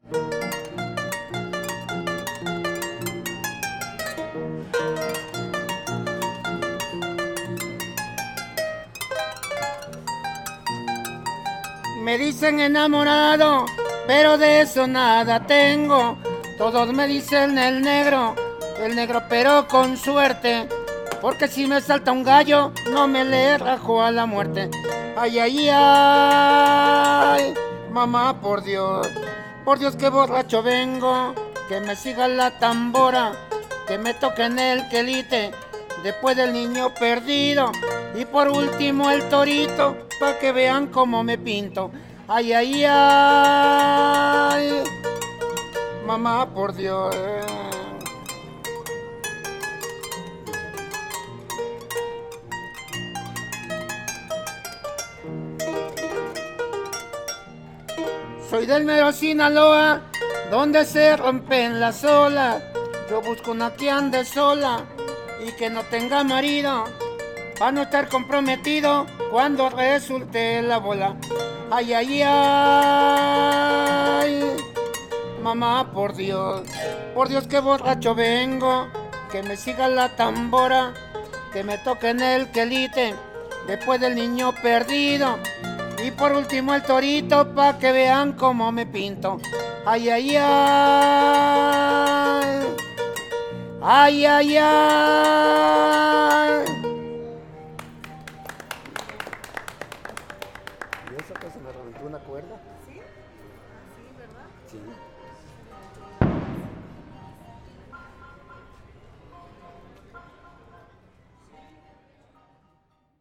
Portal Guerrero, Cholula de Rivadavia, San Andrés Cholula, Pue., Mexique - Cholula - Mexique
Cholula - Mexique
Dès le matin, les musiciens de rues sont au travail sous les arcades du Zocalo